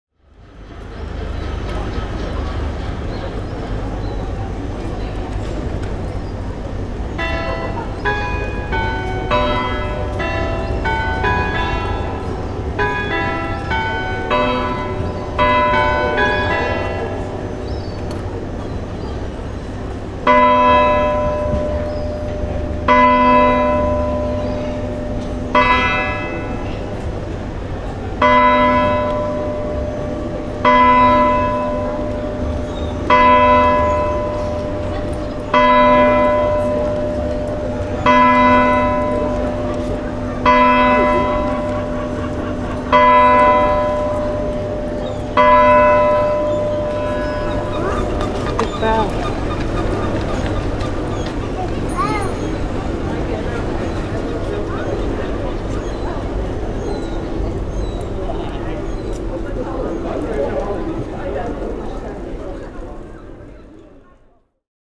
The chimes of a rather antiquated clock bell that overlooks the main precinct in the city centre. Recorded on a busy day and particularly I like the little girls comment at the end. MixPre 3 with 2 x Beyer lavaliers + a Rode NTG3 shotgun mic.